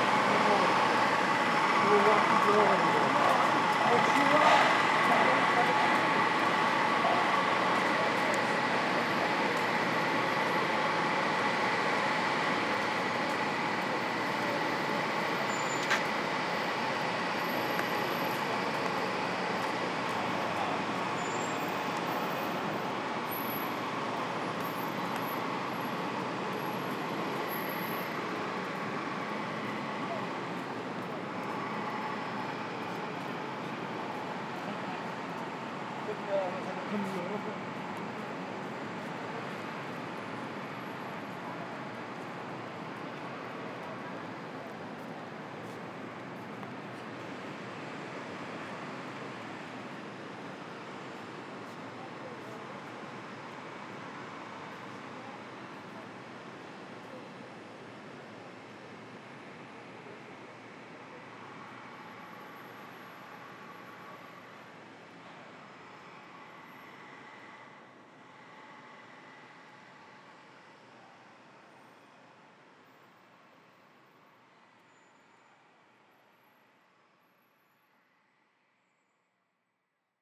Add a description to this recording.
Soundwalk through Midtown to Times Square.